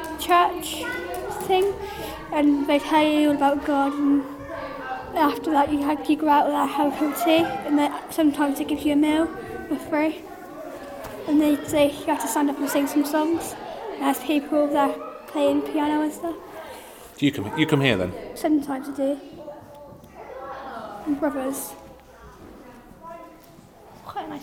Efford Walk Two: Going to church - Going to church
Plymouth, UK